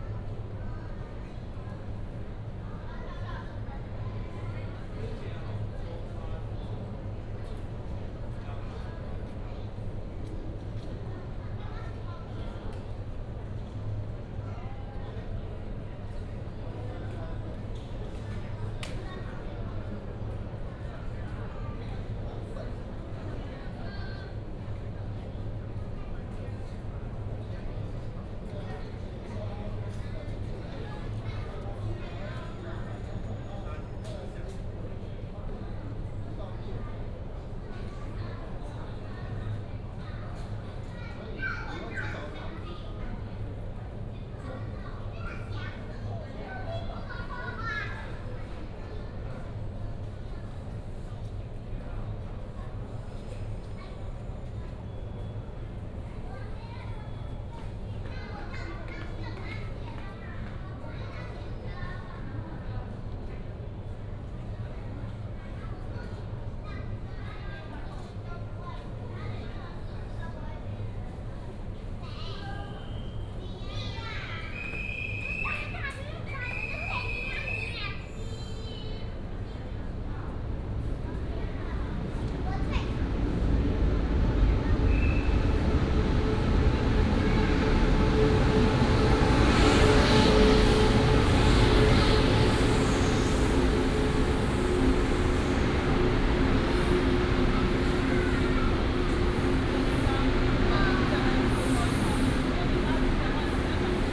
in the station platform, Station information broadcast, The train passed
22 December 2017, 15:16